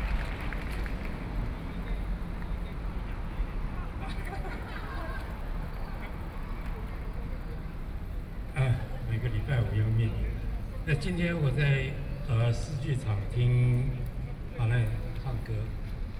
Taipei - anti–nuclear power
anti–nuclear power, in front of the Plaza, Broadcast sound and traffic noise, Sony PCM D50 + Soundman OKM II